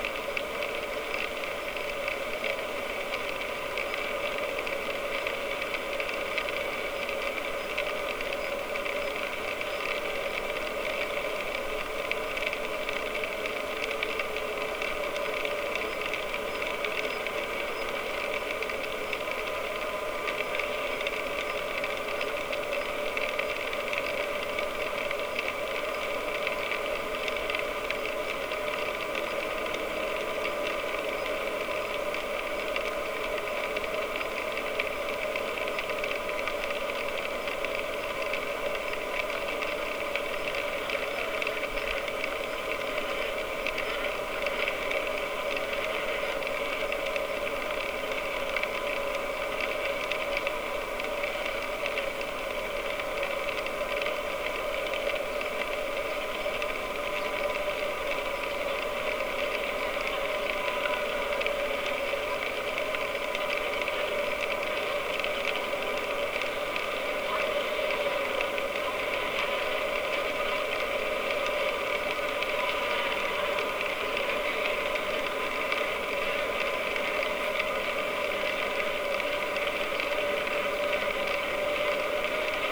벌집_bee hive...bees hiving in a log under a cliff-face on public land...of about 7 hives there is activity in only 1...there are also many other empty hives along this valley...contact mics hear a pulse inside the structure...condenser mics hear the avian activity in the valley as well as the noise from the nearby road and how it affects the hive...in a news article published this same week it is reported that 'Korean Beekeeping on the brink of collapse as 10 billion honeybees disappear'.